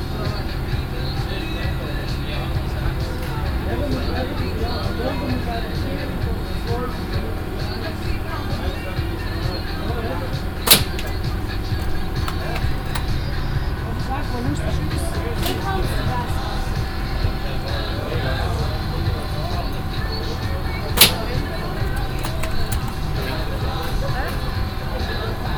diekirch, kiosque, kermess, air rifle shooting
On the kermess. Two stands with air rifles. The sound of music, agenerator, the pneumatic shots and people talking and having fun as targets got shot.
international village scapes - topographic field recordings and social ambiences